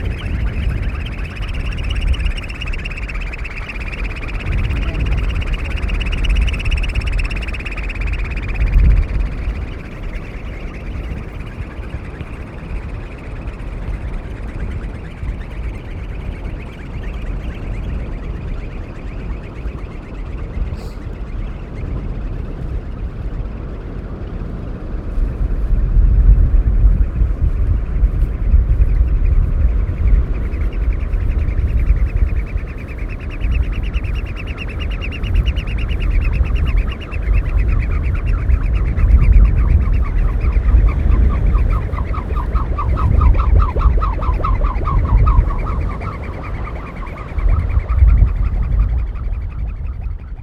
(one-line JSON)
{"title": "Wyspa Sobieszewska, Gdańsk, Poland - Gummy na wietrze", "date": "2015-04-29 10:22:00", "latitude": "54.36", "longitude": "18.84", "timezone": "Europe/Warsaw"}